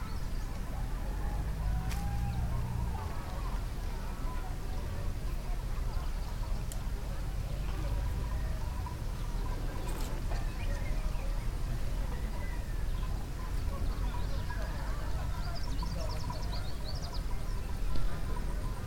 Harbour, Binga, Zimbabwe - sounds in Binga harbour...
...walking back up the way from the harbour, pausing a moment to listen to the many voices in the air… from the birds, the fishing camps a bit further up, the boats down at the lake...